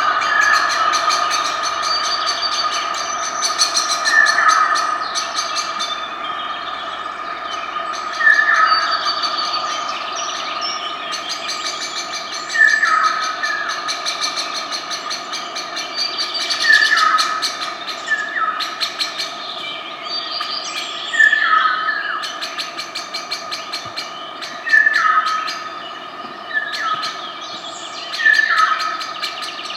Wyspa Sobieszewska, Gdańsk, Poland - Las ranek
Las ranek rec. Rafał Kołacki
8 June 2015, 04:35